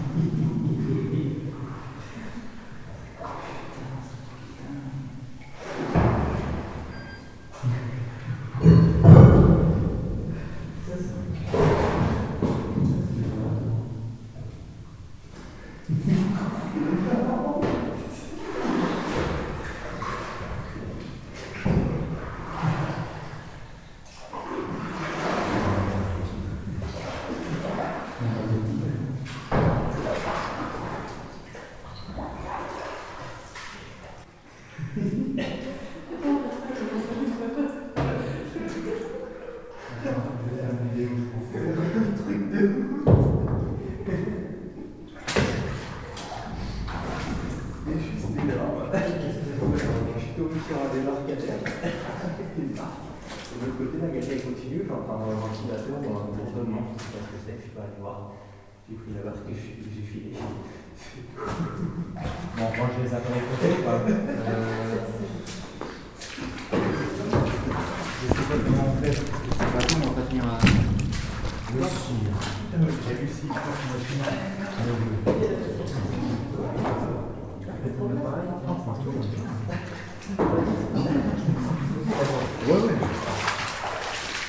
Using a boat in a flooded underground mine. A friend is going naked in a 11°C water, level is 1,50 meter high, he's searching a boat docked 200 meters more far.
Ottange, France